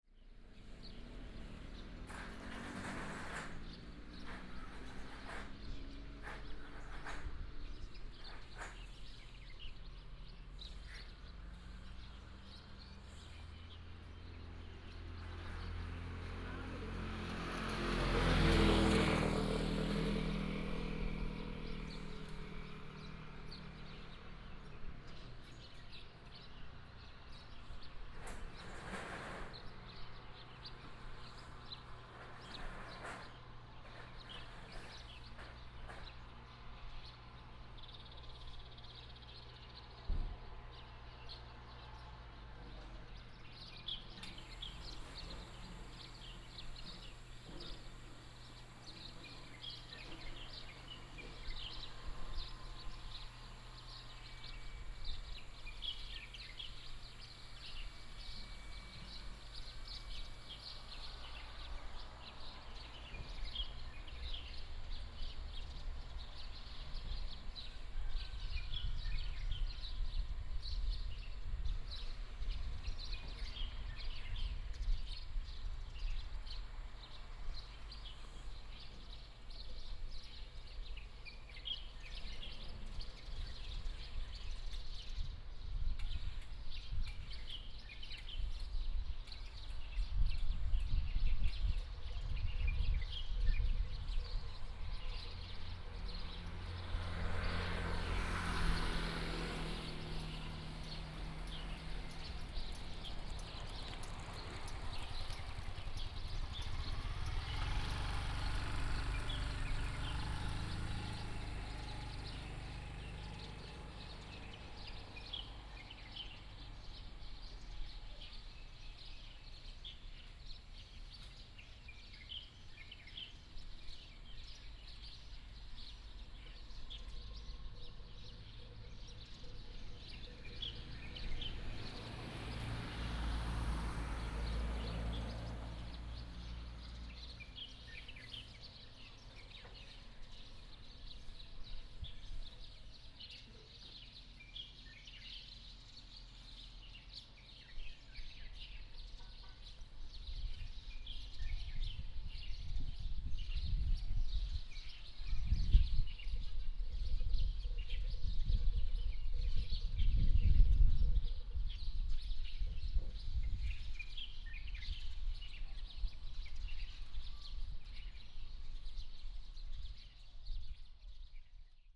文正國小站, Fanshucuo, Shuilin Township - Small village in the morning
bus station, Small village in the morning, traffic sound, birds sound
Binaural recordings, Sony PCM D100+ Soundman OKM II